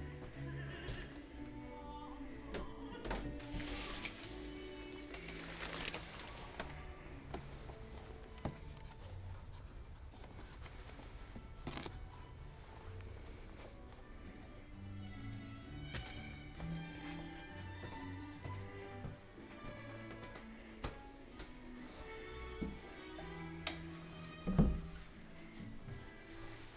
Ascolto il tuo cuore, città. I listen to your heart, city. Chapter LXXXV - Night walk et Bibe Ron in the days of COVID19 Soundwalk
"Night walk et Bibe Ron in the days of COVID19" Soundwalk"
Chapter LXXXV of Ascolto il tuo cuore, città. I listen to your heart, city
Saturday, May 23th 2020. Night walk and drinking a rum at Bibe Ron, re-opend as many others local in the movida district of San Salvario, Turin. Seventy-four days after (but day twenty on of Phase II and day seven of Phase IIB ad day 1 of Phase IIC) of emergency disposition due to the epidemic of COVID19.
Start at 10:38 p.m. end at 11:27 p.m. duration of recording 49’26”
The entire path is associated with a synchronized GPS track recorded in the (kmz, kml, gpx) files downloadable here: